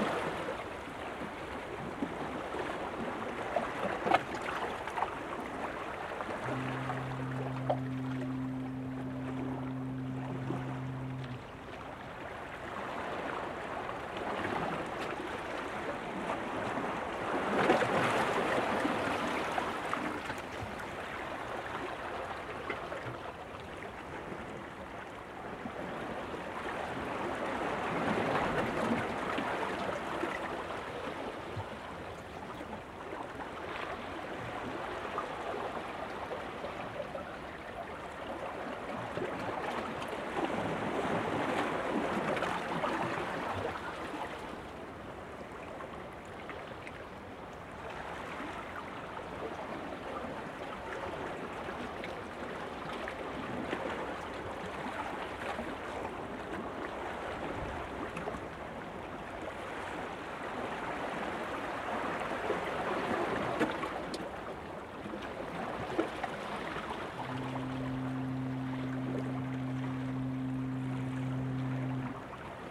Dover-Folkestone Heritage Coast, Capel le Ferne, Kent, UK - Sea swirling through rocks
This recording was taken from a rock wave-breaker which you can just about see under the surface of the water. The mics were level with the surface of the rocks, so the sounds of the sea are about as loud as the sounds from within the wave-breaker of the water splashing and bubbling.
Again, you can hear the fog horn somewhere at sea in roughly 1 minute intervals.
9 April 2015, 13:26